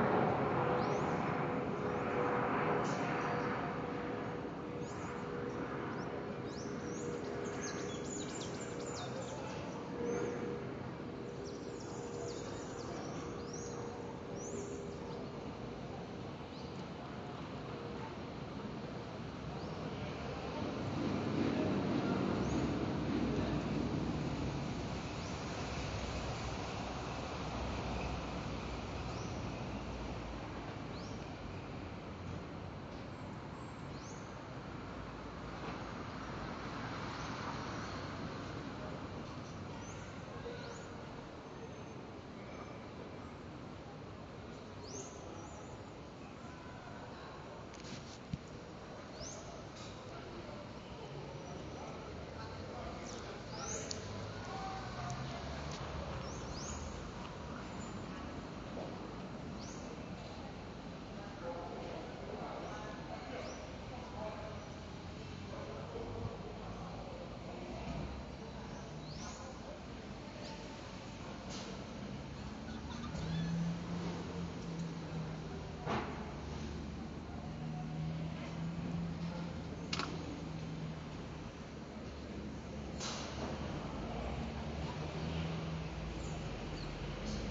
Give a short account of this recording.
APS PARA CAPTAÇÃO E EDIÇÃO DE AUDIO. PODEMOS ESCUTAR ARVORES, VENTOS, CARROS, PASSAROS E AVIÕES.